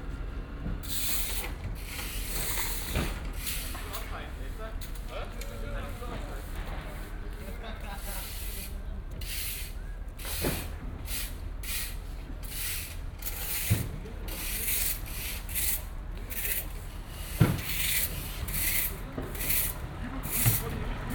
maybachufer, wochenmarkt, fischstand - fischstand, marktende, strassenkehrer
09.09.2008 20:15
fischstand weg, arbeiter kehren die strasse
fish stand gone, workers cleaning the street